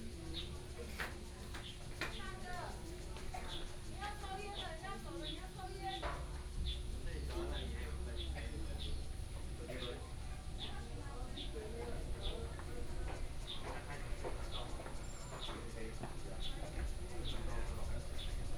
Liyu Lake, Shoufeng Township - Tourists
At the lake, Tourists, Yacht region, Birdsong, Hot weather